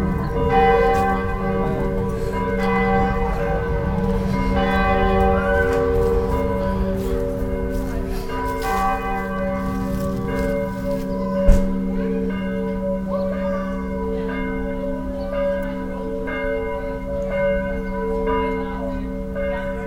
Berlin, Reuterplatz, church bells - berlin, reuterplatz, church bells
Standing at a children playground on a winter sunday at noon. The sounds of church bells - first from the Christophorus church nearby then followed by Nicodemus church in the distance. In the background the sounds of children playing and the city traffic.
soundmap d - social ambiences and topographic field recordings
Berlin, Germany, December 2011